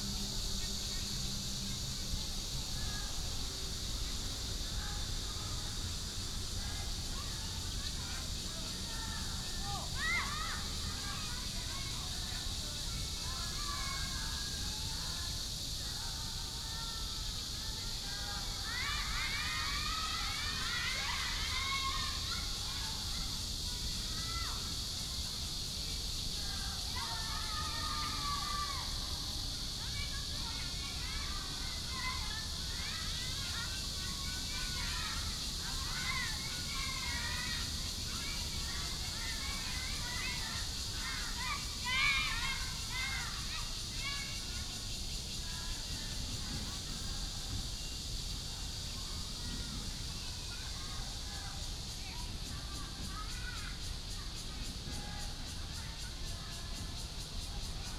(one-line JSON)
{"title": "延平公園, Taoyuan Dist. - walking in the Park", "date": "2017-07-27 10:05:00", "description": "walking in the Park, Cicada cry, traffic sound", "latitude": "24.98", "longitude": "121.32", "altitude": "103", "timezone": "Asia/Taipei"}